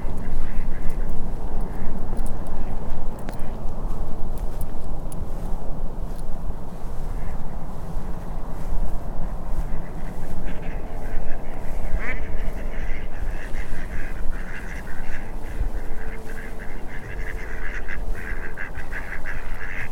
Drumsna, Co. Leitrim, Ireland - The Sunken Hum Broadcast 85 - The Chatty Ducks of Drumsna Eating Scones at Sunset - 26 March 2013
There were six ducks hanging out when I went down to the River Shannon. When the scone crumbs got thrown at them, five of the ducks happily scarfed them down but one lonely little duck was kept away from the scone in a bullying fashion by the others.
County Leitrim, Connacht, Republic of Ireland